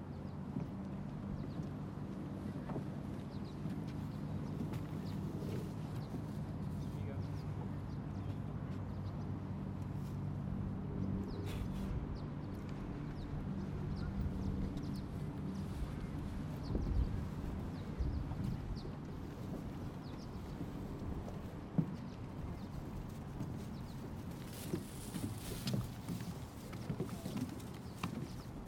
Greenlake Park, Seattle Washington
Part one of a soundwalk on July 18th, 2010 for World Listening Day in Greenlake Park in Seattle Washington.
WA, USA